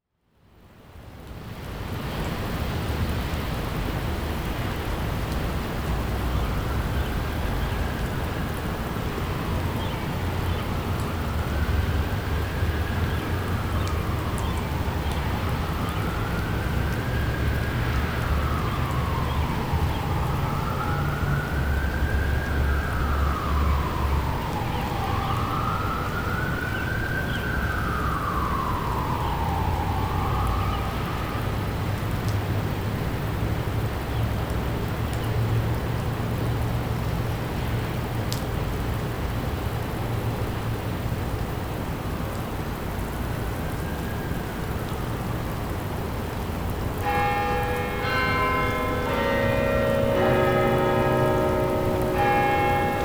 Shove Memorial Chapel, Colorado College, N Nevada Ave, Colorado Springs, CO, USA - Shove Chapel on a rainy afternoon
Recorded in front of Shove Memorial Chapel's main (Western) entrance, facing West, using a Zoom H2 recorder.
Rain, cars, emergency vehicle sirens, and bell chiming are all part of the soundscape.
20 April 2018